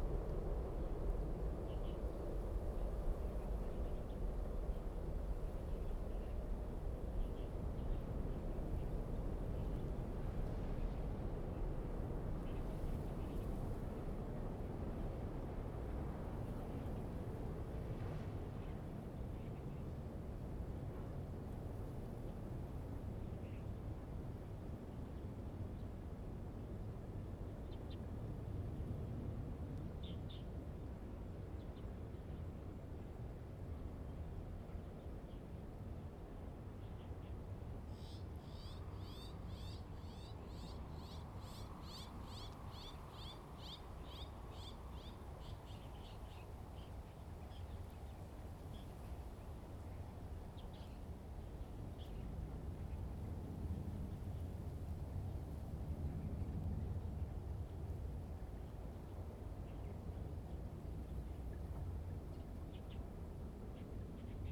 {"title": "大園區, Taoyuan City - near the airport", "date": "2017-08-18 15:20:00", "description": "Near the airport, The plane landed, The plane was flying through, Zoom H2n MS+XY", "latitude": "25.07", "longitude": "121.21", "altitude": "25", "timezone": "Asia/Taipei"}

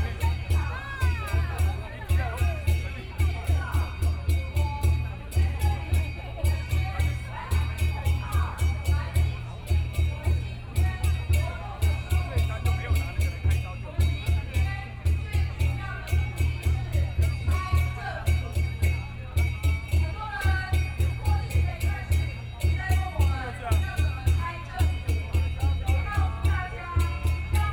{"title": "Ketagalan Boulevard - Protest", "date": "2013-08-18 17:15:00", "description": "Proposed by the masses are gathering in, Sony PCM D50 + Soundman OKM II", "latitude": "25.04", "longitude": "121.52", "altitude": "12", "timezone": "Asia/Taipei"}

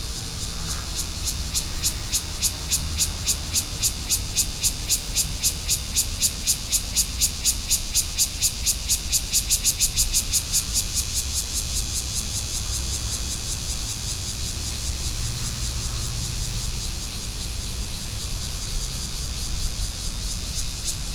{"title": "Longmi Rd., Bali Dist., New Taipei City - Cicada sounds", "date": "2012-07-06 17:59:00", "description": "Hot weather, Cicada sounds, Traffic Sound\nBinaural recordings, Sony PCM D50 +Soundman OKM II", "latitude": "25.12", "longitude": "121.46", "altitude": "7", "timezone": "Asia/Taipei"}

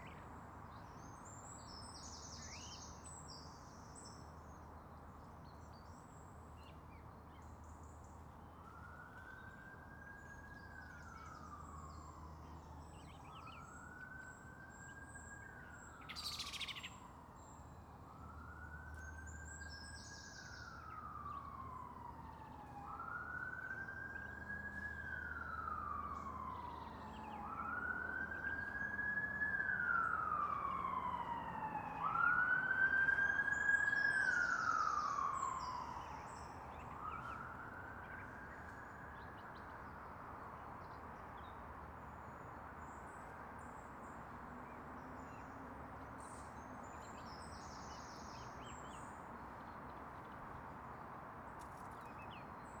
{"title": "Broxholm Rd, Newcastle upon Tyne, UK - Armstrong Park, Newcastle upon Tyne", "date": "2016-10-30 10:31:00", "description": "Armstrong Park is an area of woodland in urban Newcastle. Recording contains bird song and sound of a passing ambulance on the nearby road. Recorded on a handheld Tascam DR-05.", "latitude": "54.99", "longitude": "-1.59", "altitude": "38", "timezone": "Europe/London"}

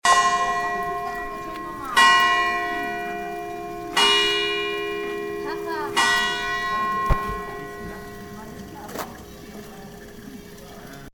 {"title": "caprauna, church clock bell", "date": "2009-07-24 23:55:00", "description": "soundmap international: social ambiences/ listen to the people in & outdoor topographic field recordings", "latitude": "44.12", "longitude": "7.96", "altitude": "1000", "timezone": "Europe/Berlin"}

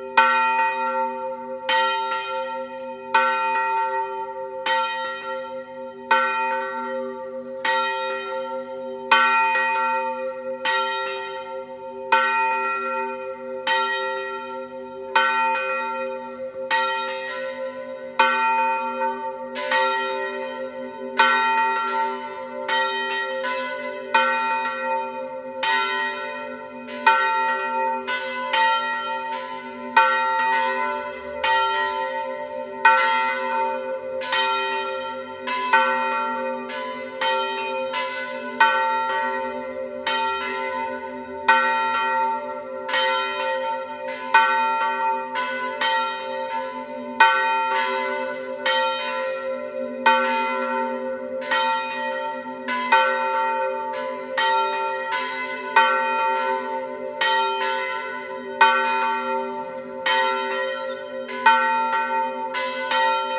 {"title": "SBG, Camí de Vilanova - Festa Major, Repic de Campanes", "date": "2011-08-24 11:30:00", "description": "Tradicional repique de campanas previo a la misa en el primer día de la fiesta mayor.", "latitude": "41.98", "longitude": "2.17", "altitude": "851", "timezone": "Europe/Madrid"}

